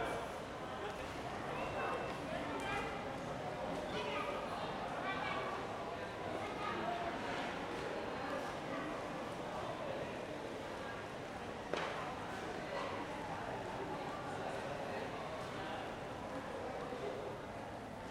Hoog-Catharijne CS en Leidseveer, Utrecht, Niederlande - entrance "hello city" 2
some minutes later... slightly different position